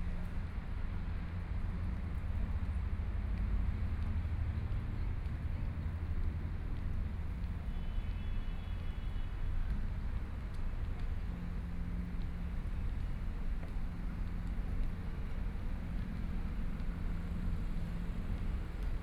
Songjiang Rd., Taipei City - Traffic Noise
Walking on the road, Traffic Noise, Jogging game, Binaural recordings, ( Keep the volume slightly larger opening )Zoom H4n+ Soundman OKM II
Zhongshan District, Taipei City, Taiwan, 15 February, 15:46